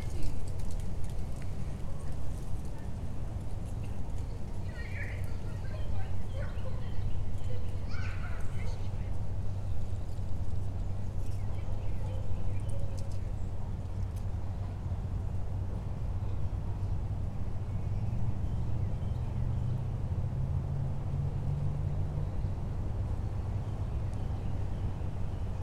Manning Rd SW, Marietta, GA, USA - Laurel Park - Pond
One of the ponds at Laurel Park. The recorder was placed on a picnic table to capture the soundscape of the surrounding area. Birds, park visitors, children playing, traffic, people walking around the pond, and noises from the nearby houses can all be heard. The water in the pond is still and produces no sound of its own.
[Tascam Dr-100mkiii & Primo EM272 omni mics]
Georgia, United States, 17 February